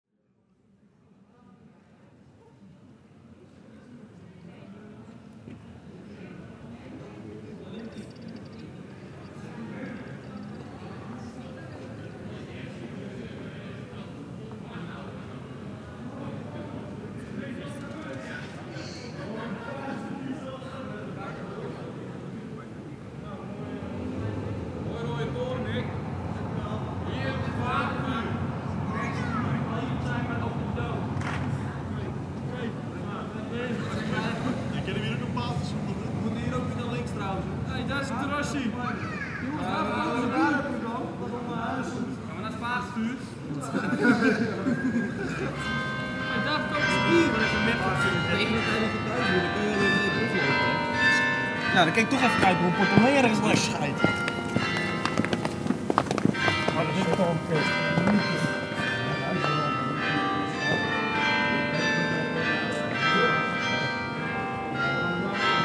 Maastricht, Pays-Bas - The bells ringing hour
The bells of the Sint-Servaasbasiliek, the cathedral of Maastricht.
Maastricht, Netherlands, 20 October 2018